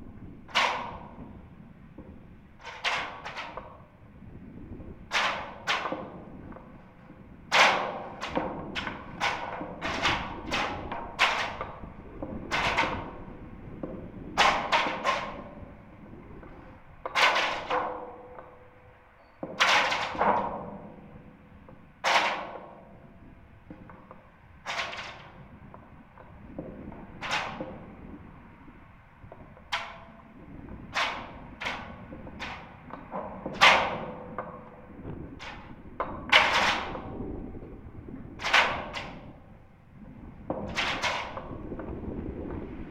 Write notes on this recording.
On several occasions I'd noticed that the cables inside a lamp post on the A33 clang interestingly in the wind. It is as though long cables travel inside the lamp-post, and clang and swish around in high winds... it's quite a subtle sound and because it's on a dual carriageway, bordered on each side by dense, fast-moving traffic, I thought that isolating the sound from the environment by using a contact microphone might better help me to hear it. In this recording I attached a contact microphone to the lamp post with blu-tack and recorded in mono to my EDIROL R-09. I think it's amazing - you can really hear the wires twisting about inside the lamp-post, and whipping in the wind. I recorded from outside too, so you can hear the contrast, but I love knowing that this sound is happening whenever there is wind. I also love that it seems like a mistake - none of the other tall lamp posts make this sound, so maybe something isn't secured.